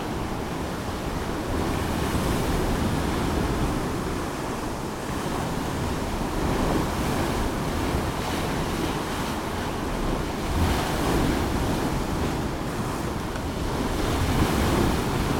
city walls, Novigrad, Croatia - eavesdropping: rain, drops, waves, wind
slowly walking through the doors of city walls; umbrella, drops, rain; heavy wavy and windy situation
September 2012